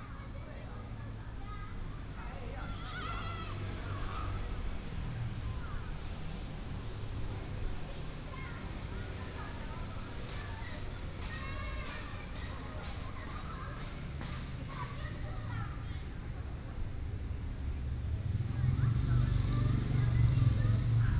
in the Park, Traffic sound, sound of birds, Child
Datong District, Taipei City, Taiwan, 10 April 2017